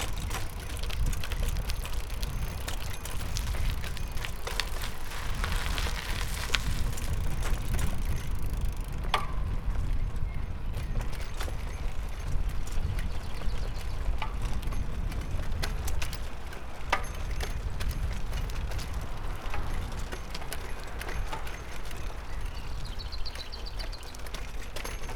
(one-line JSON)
{"title": "Rezerwat Morasko, Meteorytowa road - bumpy ride", "date": "2015-05-24 12:35:00", "description": "two bikes bouncing and clanking on a road made of uneven concrete slabs full of holes and bumps.", "latitude": "52.48", "longitude": "16.89", "altitude": "122", "timezone": "Europe/Warsaw"}